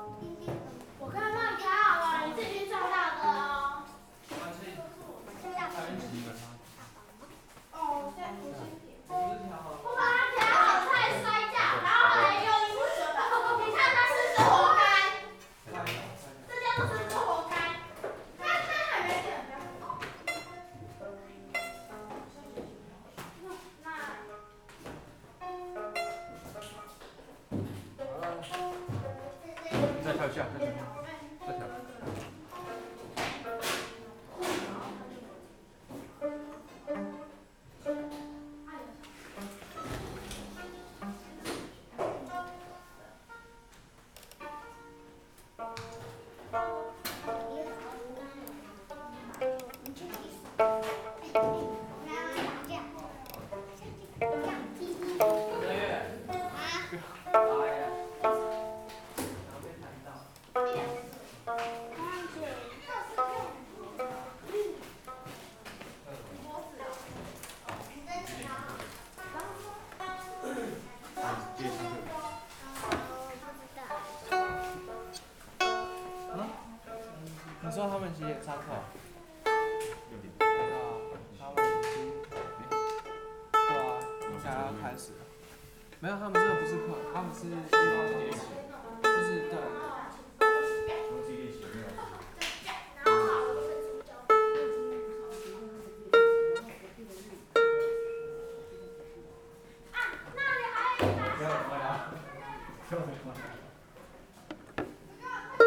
Pupils are for violin tuning, Zoom H6